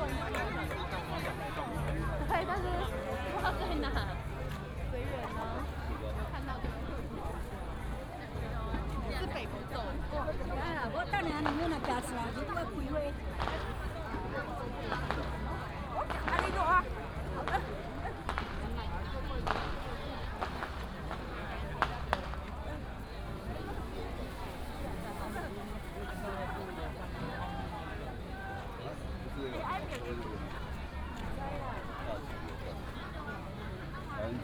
Baishatun, 苗栗縣通霄鎮 - Mazu Pilgrimage activity
Firecrackers and fireworks, Many people gathered in the street, Baishatun Matsu Pilgrimage Procession, Mazu Pilgrimage activity
Tongxiao Township, Miaoli County, Taiwan, 9 March, ~10:00